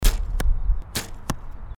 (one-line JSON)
{"title": "langenfeld, rudolf-kronenberg weg, bogenschiessen - langenfeld, rudolf-kronenberg weg, bogenschuss 2", "date": "2008-06-23 21:03:00", "description": "mittags, stereoaufnahme von übungsschüssen eines ehemaligen deutschen meisters im bogenschiessen - no. 2\nproject: :resonanzen - neandereland soundmap nrw - sound in public spaces - in & outdoor nearfield recordings", "latitude": "51.12", "longitude": "6.98", "altitude": "74", "timezone": "Europe/Berlin"}